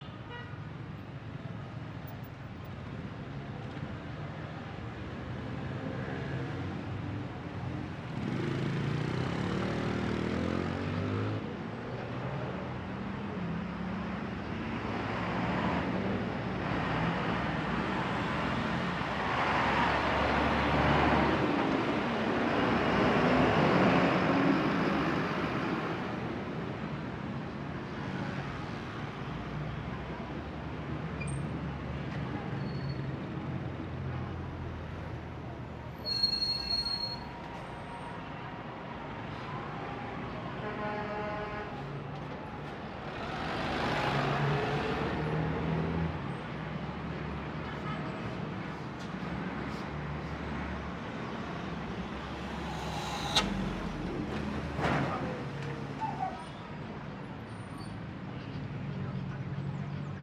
Cl., Bogotá, Colombia - Paisaje Urbano
Trafico de carros por una avenida principal, se logra escuchar el pasar de carros, motos y camiones, además de percibir los sonidos de frenos y pitos